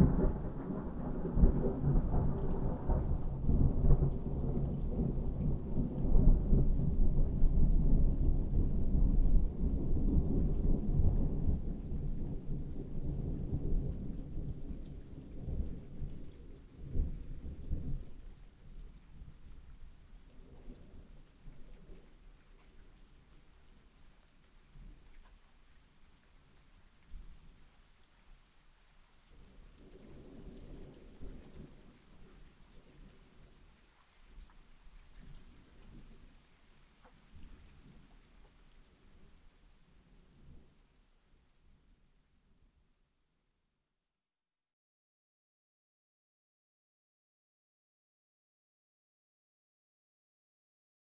Wedmore, Somerset, UK - Big Thunder Storm
My Daughter woke me at 2.00 am to tell me about an amazing thunder storm. I watched the most spectacular show for a full hour !! Here are the best bits, recorded on a 744 with a KFM6